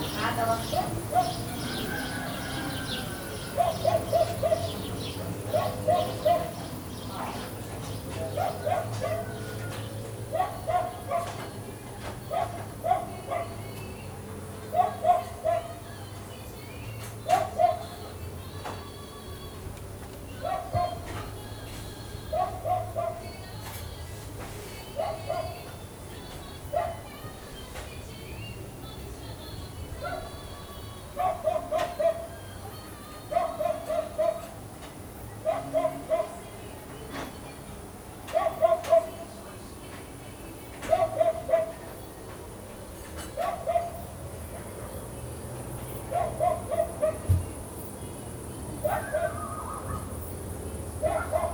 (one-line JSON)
{"title": "Bahitgul Boutique-Hotel, Bakhchsysaray, Crimea, Ukraine - street dogs, wild birds and other guests - from day to night", "date": "2015-07-17 11:00:00", "description": "11am an 11pm: same place (at svetlana's favourite Tatar hotel), glued together. All plants, animals, weather, honking cars, hotel-guests and radio-programs communicate with one-another. Does the zoom recorder reveal that?", "latitude": "44.75", "longitude": "33.88", "altitude": "225", "timezone": "Europe/Simferopol"}